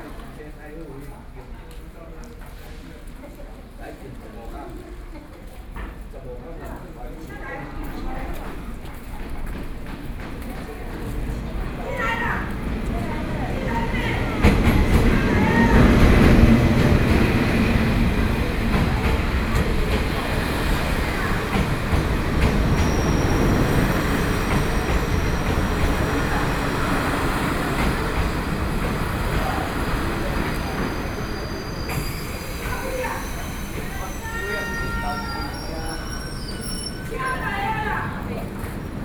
Ruifang Station, New Taipei City - On the platform